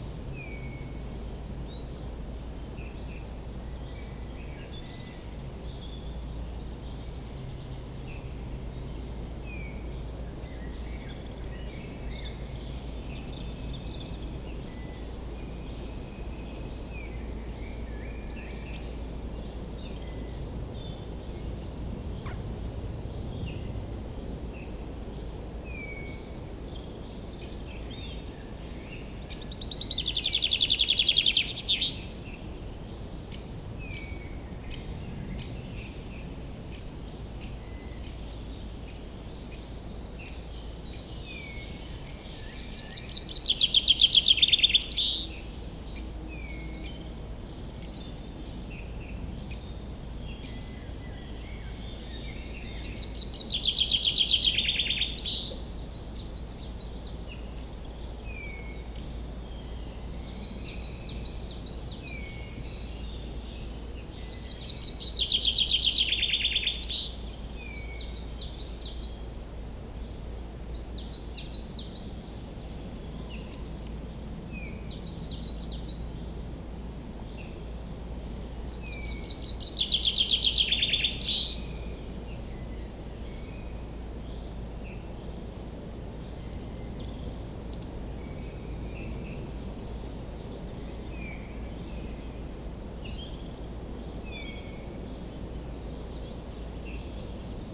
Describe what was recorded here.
In the woods, the repetitive but pleasant call from the Common Chaffinch. It's springtime, this bird is searching a wife ;-)